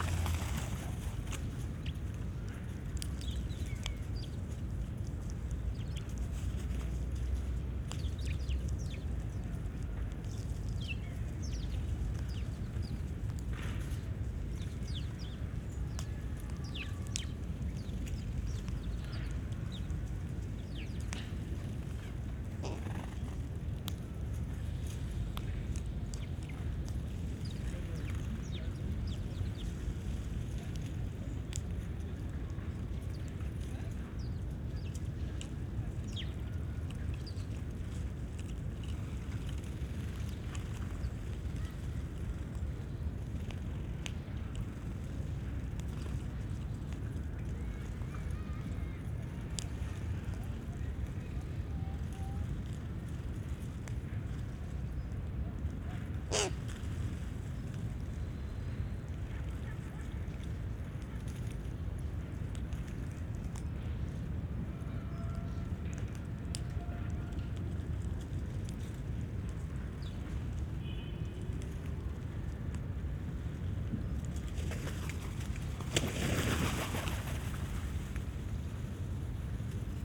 Berlin Tempelhof Birds - dun crows
dun crows have taken over, picking sunflowerseed
Berlin, Germany